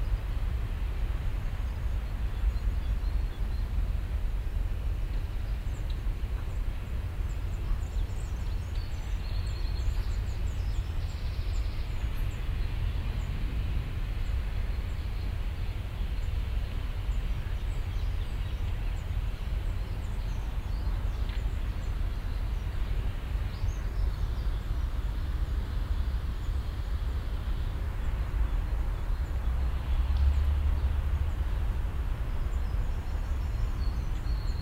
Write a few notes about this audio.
morgendliches ambiente im friedenspark, kindergartenbesucher, strassenverkehr, vögel, jogger und ein zug, soundmap: cologne/ nrw, project: social ambiences/ listen to the people - in & outdoor nearfield recordings